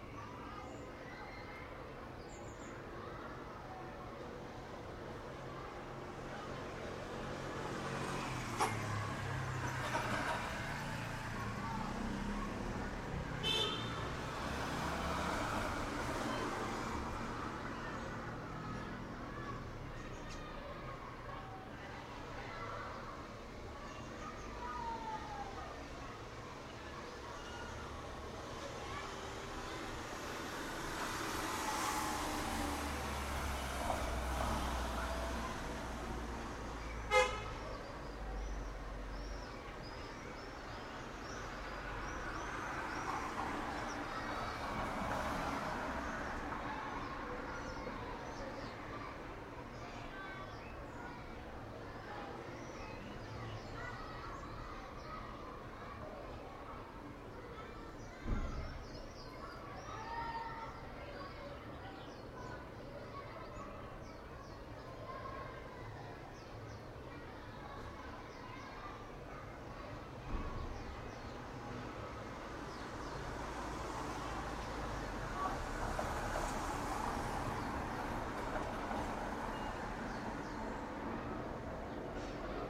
Katamon, Jerusalem, Israel - School and birds
Elementary school recess, passing traffic, overhead jet, birds (swifts, sparrows, and blackbirds among others)
11 March, 13:00